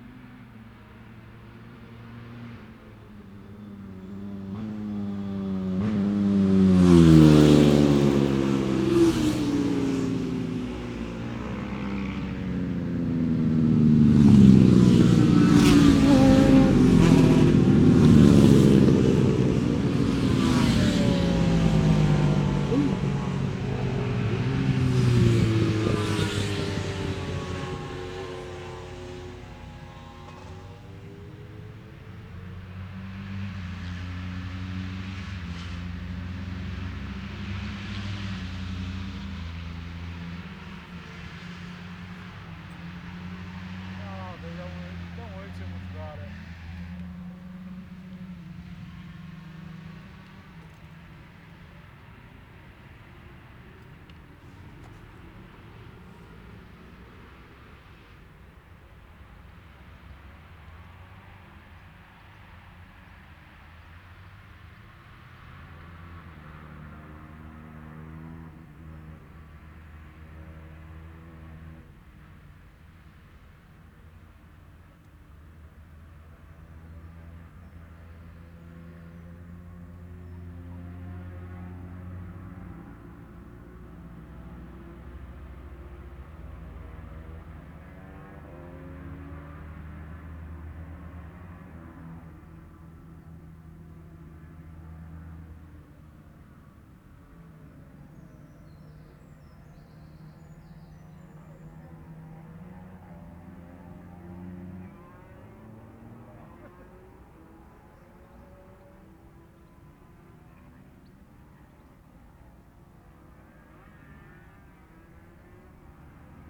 Cock o' the North Road Races ... Oliver's Mount ... Ultra lightweight / Lightweight motorbike practice ...
Scarborough, UK, 24 June 2017, 09:30